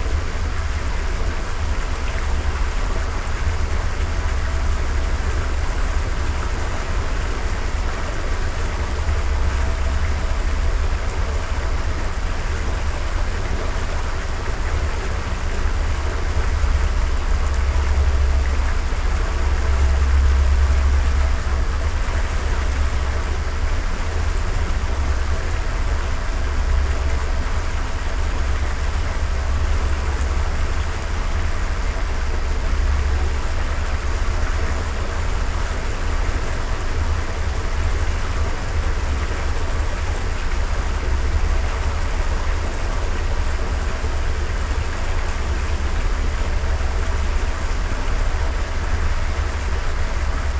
Utena, Lithuania, mud clearing multichannel
the study of mud clearing basin. multichannel recording. omni, contact, electromagnetic